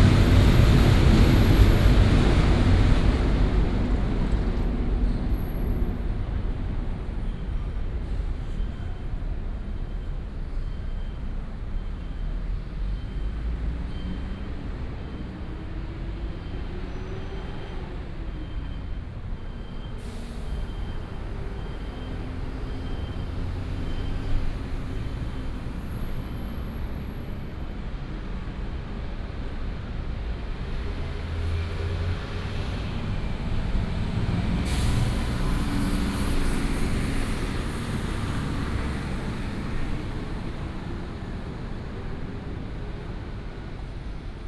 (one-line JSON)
{"title": "Tamsui Line, Taipei - Walk along the track", "date": "2017-04-10 19:38:00", "description": "Walk along the track, To the direction of the MRT station", "latitude": "25.07", "longitude": "121.52", "timezone": "Asia/Taipei"}